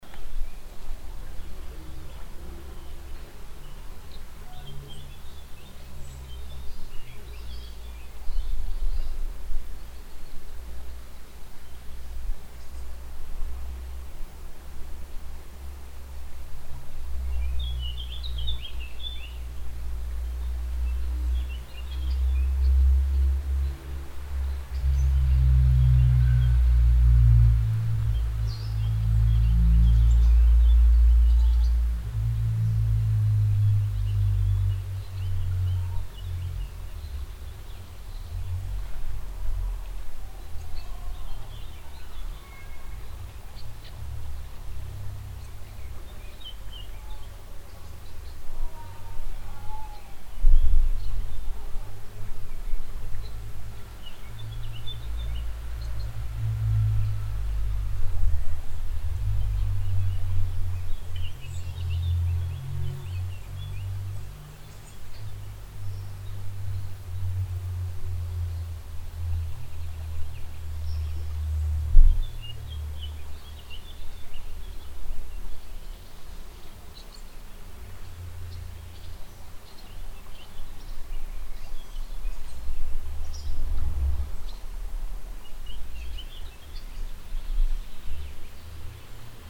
ouren, bridge, our
Under a small bridge that crosses the border river Our. The sound of the mellow water flow reflecting under the bridge architecture. Low water on a hot and mild windy summer evening.
Project - Klangraum Our - topographic field recordings, sound objects and social ambiences